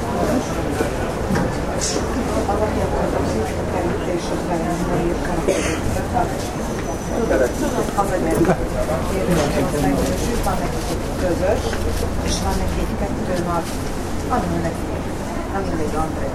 bratislava, market at zilinska street - market atmosphere II
3 September 2010, 10:19